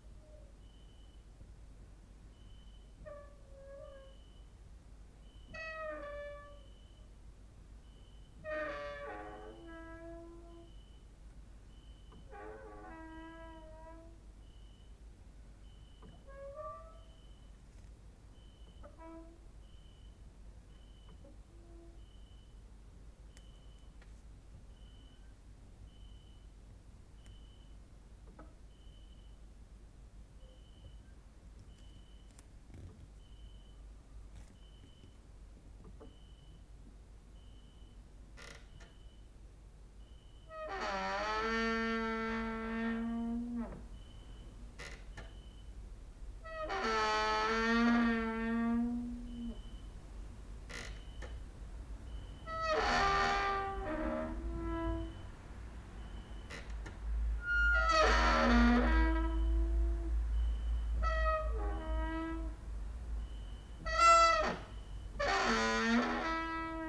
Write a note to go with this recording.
cricket outside, exercising creaking with wooden doors inside